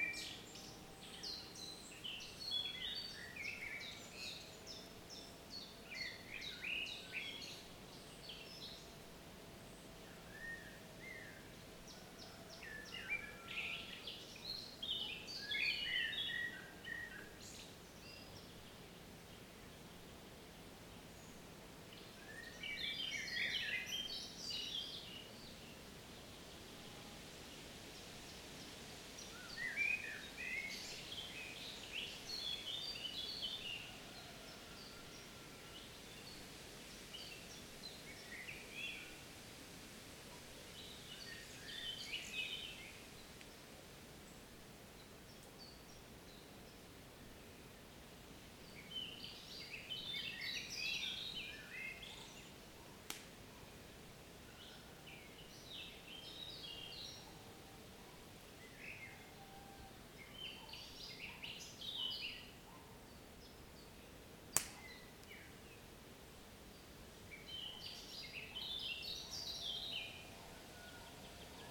провулок Черняховського, Вінниця, Вінницька область, Україна - Alley12,7sound15birds
Ukraine / Vinnytsia / project Alley 12,7 / sound #15 / birds